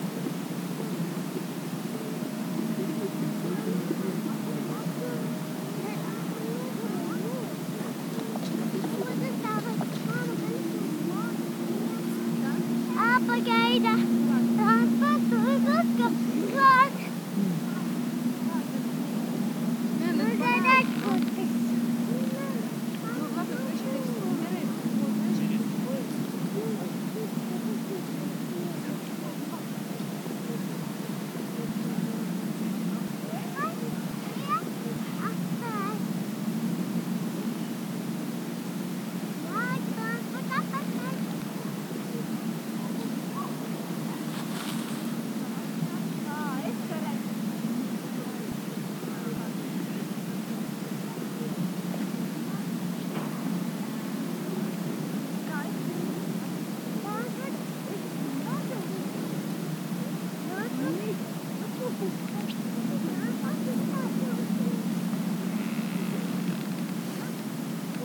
Recordist: Saso Puckovski
Description: Sunny day at the peak of the dune, about 40m from the Sun Dial. Tourists, wind and bush crackling noises. Recorded with ZOOM H2N Handy Recorder.